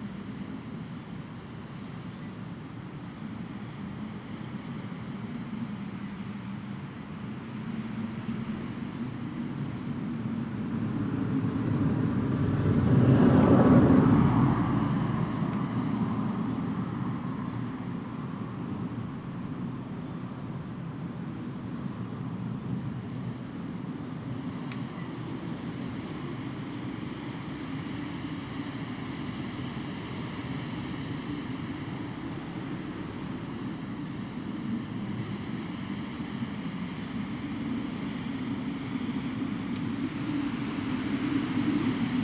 Trachilos, Crete, wind in electric wires
the day was windy and there's kind of aeolian harp in the wires....
Trachilos, Greece, 30 April 2019, ~13:00